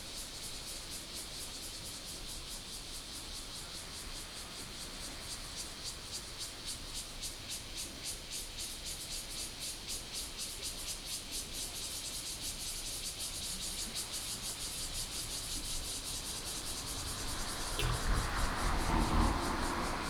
Minquan Rd., Guanshan Township - Cicadas and streams
Cicadas and streams, Traffic Sound